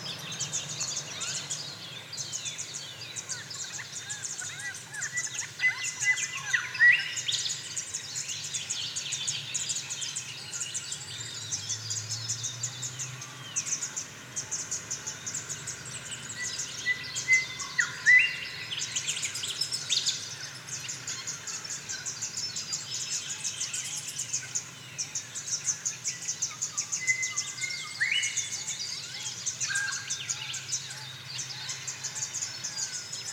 Wollombi NSW, Australia - Wollombi Morning Birds
16 November, 05:30